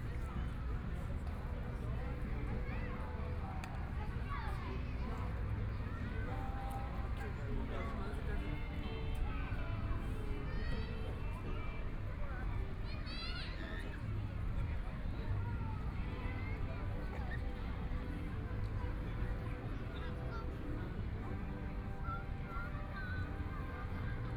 Bihu Park, Taipei City - Walk in the park
Walk in the park, Traffic Sound
Binaural recordings